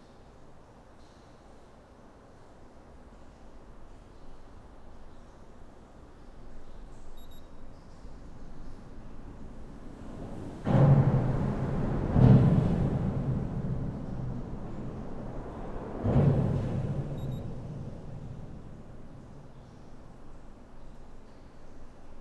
Dinant, Belgium - Charlemagne bridge
Inside the Charlemagne bridge, this is the discreet sound of the two alarm systems placed on the door. Its impossible to enter in a bridge without deactivate an alarm. Its understandable.